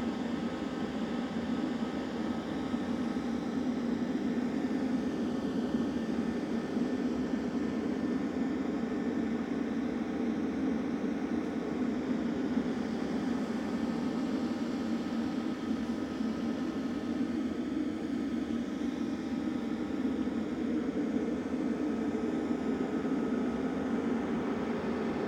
Rauenstein, Stadt Wehlen, Deutschland - antenna ventilation
huge antenna station in the Rauenstein forest, near Stadt Wehlen, sound of ventilation and other humming
(Sony PCM D50, Primo EM172)
Stadt Wehlen, Germany